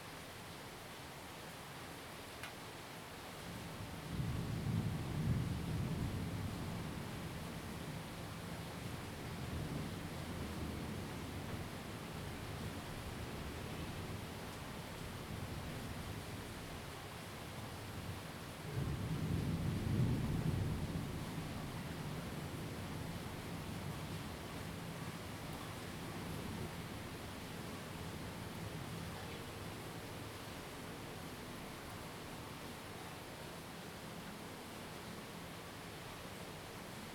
Rende 2nd Rd., Bade Dist. - Thunderstorms
Thunderstorms, wind, rain, Zoom H2n MS+XY